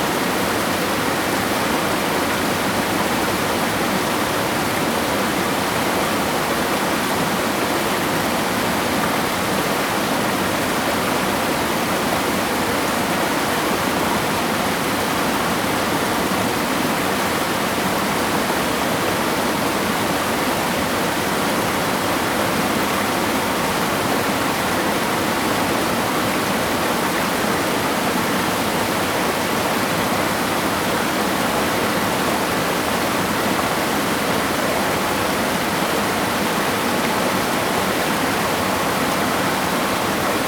猴洞坑瀑布, 礁溪鄉白雲村, Taiwan - Streams and waterfalls
Streams and waterfalls
Zoom H2n MS+XY
Jiaoxi Township, Yilan County, Taiwan, 7 December 2016, ~13:00